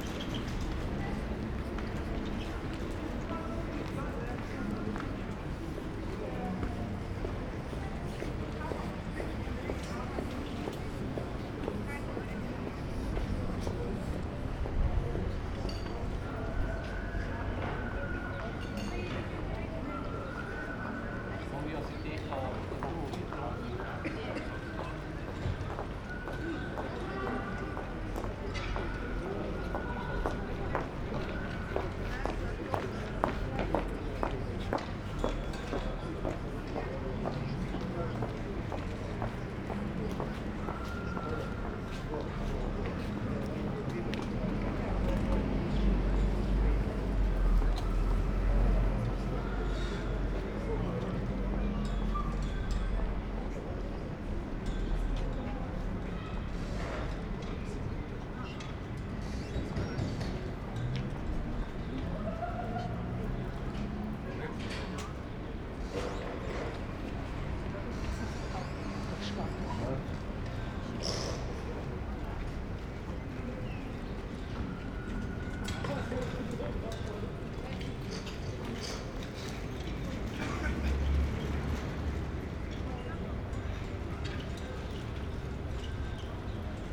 Maribor, Trg Leona Stuklja - late afternoon ambience
place revisited, nothing much happens...
(Sony PCM D50, Primo EM172)